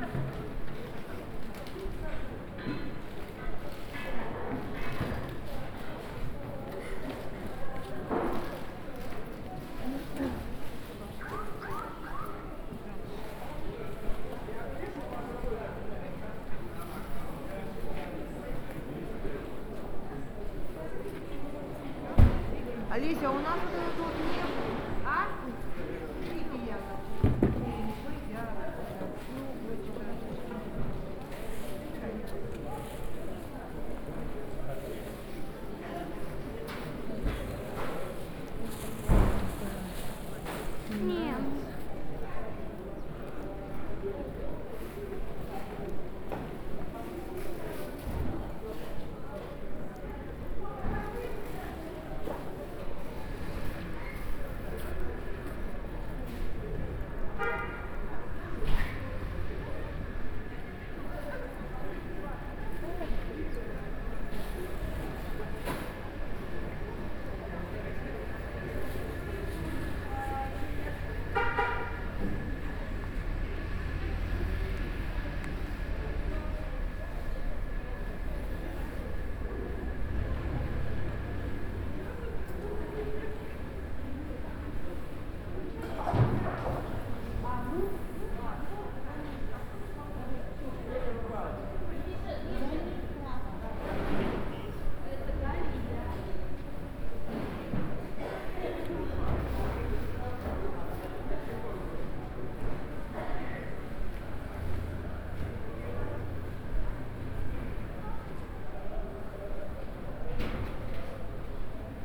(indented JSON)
{
  "title": "Birobidzhan, Jewish Autonomous Region, Russia - Market at closing time - Soundwalk",
  "date": "2015-10-31 17:02:00",
  "description": "Crossing the market, mostly under the roof. Babushkas, kids, footsteps, foil, cardboard. Binaural recording (Tascam DR-07+ OKM Klassik II).",
  "latitude": "48.79",
  "longitude": "132.93",
  "altitude": "84",
  "timezone": "Asia/Vladivostok"
}